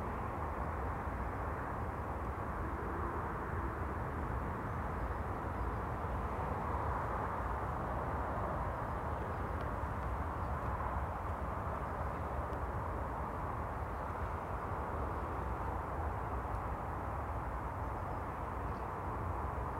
Contención Island Day 20 outer southwest - Walking to the sounds of Contención Island Day 20 Sunday January 24th
The Drive Moor Place Woodlands Oaklands Avenue Oaklands Grandstand Road Town Moor High Street Moor Crescent The Drive
A mix of ash hawthorn and oak
A robin moves through
the dense branches of the hawthorns
A tit calls one carrion crow
Bright sunshine bounces off frosted grass.
A plane takes off
four miles away and clearly audible
Walkers climb the hill
up and more circumspectly down